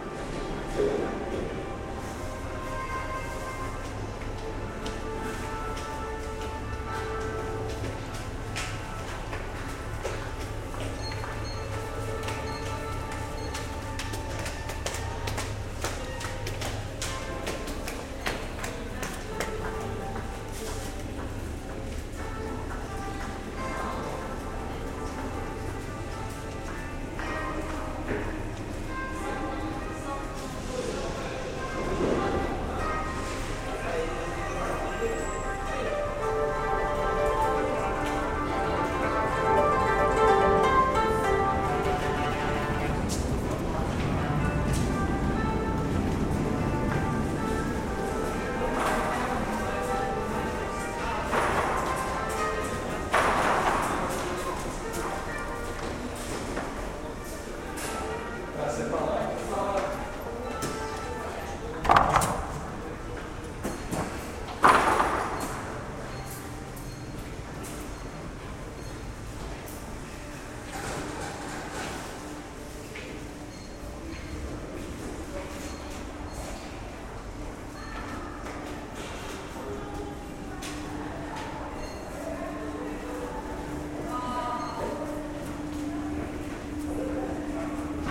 metro koto
juL : inside the metro station of pere lachaise, a koto player creating a contrasted ambience withe the surrounding casual sounds. time for a sharawadji effect...